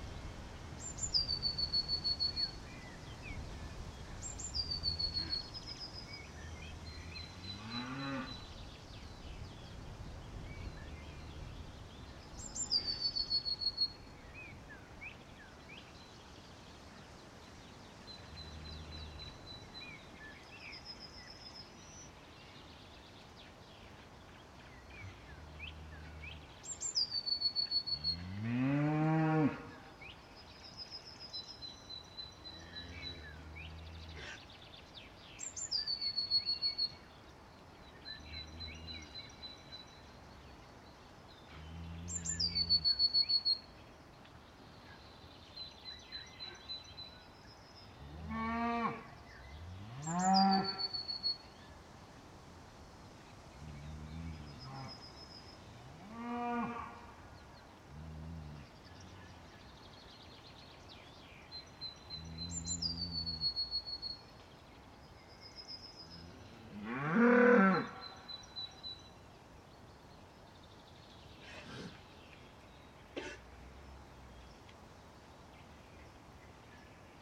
Piirimäe, Farm, Estonia - herd of cows in the early morning
With the green grass coming up quickly the nearby farm operation released their cows this week, introducing a new dynamic to the local soundscape.